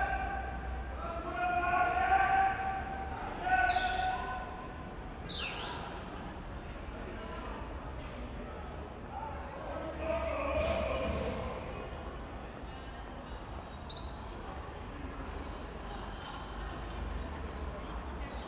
Brüsseler Platz, Köln - solitude bird and weekend people
Köln, Brüsseler Platz, solitude bird singing in a tree at night, noisy weekend people. it's relatively warm, many people are on the streets on this friday night.
(tech note: sony pcm d50, audio technica AT8022)
Cologne, Germany, March 2012